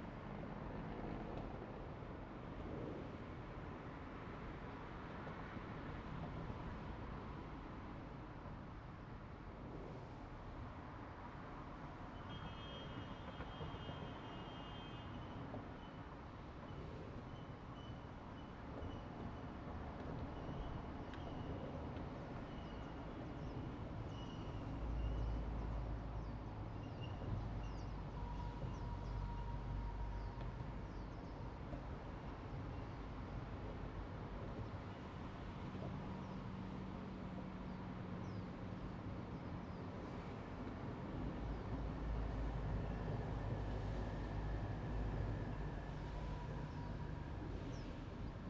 普忠路, Zhongli Dist., Taoyuan City - Next to the tracks
Next to the tracks, wind, Traffic sound, The train runs through, Zoom H2n MS+XY